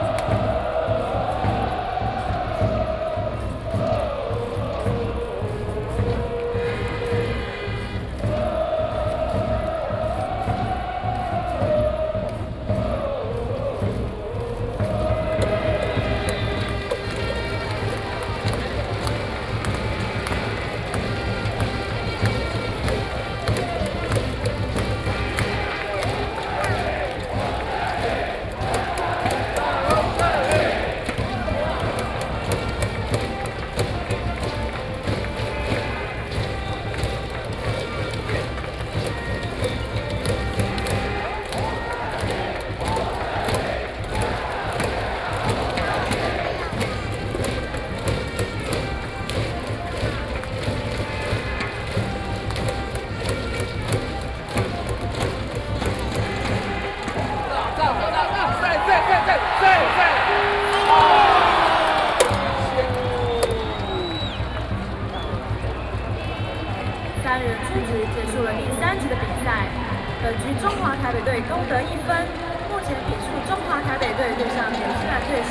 新莊棒球場, New Taipei City - Baseball field
Baseball field, Cheers and refueling sound baseball game, Binaural recordings, ( Sound and Taiwan - Taiwan SoundMap project / SoundMap20121115-32 )
Xinzhuang District, New Taipei City, Taiwan